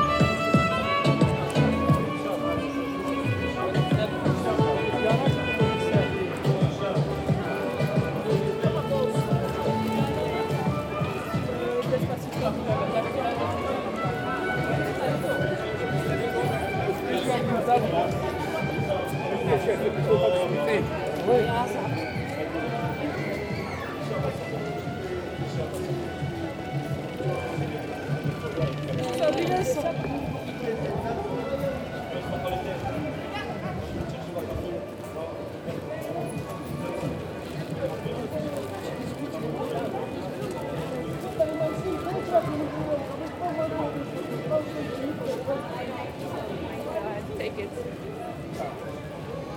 Bruxelles, Belgium - The commercial artery
The awful rue Neuve ! Long and huge commercial artery, henceforth the same as all cities. Crowded with walkers, bad street musicians, people who enjoy the sun and feel good.
August 2018